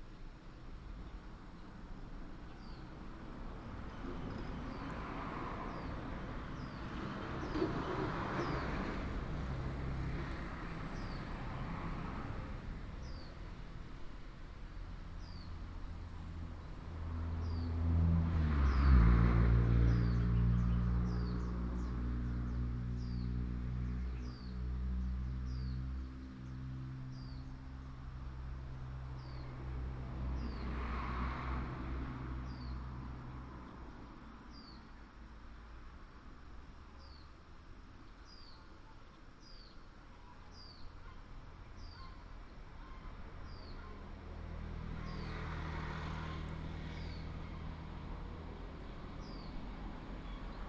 北部橫貫公路40號, Fuxing Dist., Taoyuan City - In the small temple
In the small temple, sound of birds
Traffic sound, Chicken cry
Zoom H2nMS+XY